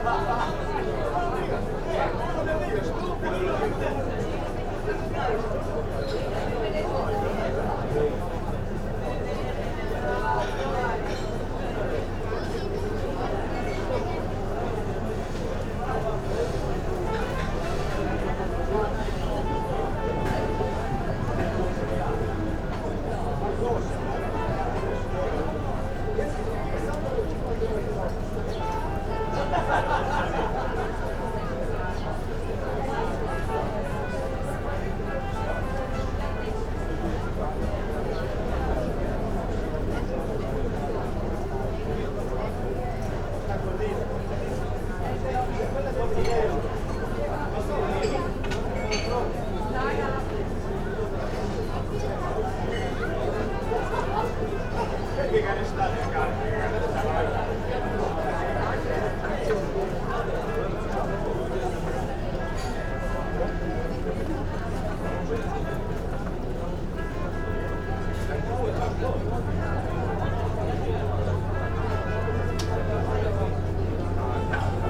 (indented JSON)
{
  "title": "from/behind window, Novigrad, Croatia - summer morning, trumpet",
  "date": "2015-07-18 11:21:00",
  "description": "high summer, bright lights ... below the window murmur of people at the cafe and restaurant, trumpet comes from one of the sealing boats",
  "latitude": "45.32",
  "longitude": "13.56",
  "timezone": "Europe/Zagreb"
}